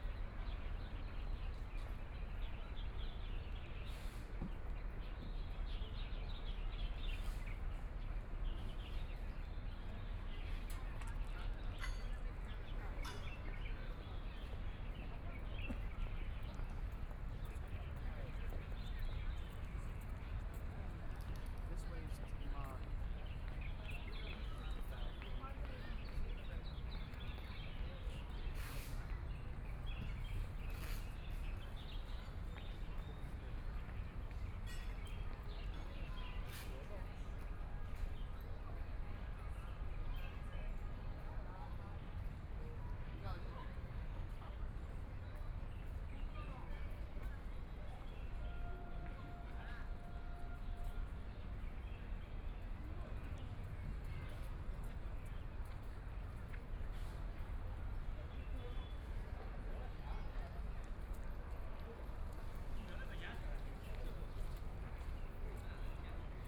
Huangpu District, Shanghai - in the park
walking in the park, Binaural recording, Zoom H6+ Soundman OKM II